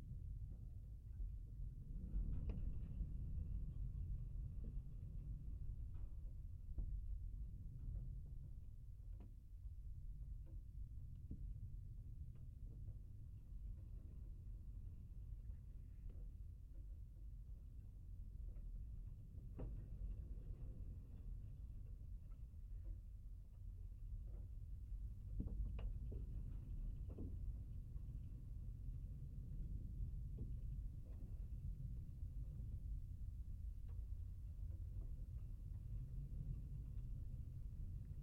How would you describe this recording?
The Research Station has a metal chain-link fence to keep out activists and protesters. The fence is covered by CCTV. The sun was hot making the metal expand and contract. Stereo pair Jez Riley French contact microphones + SoundDevicesMixPre3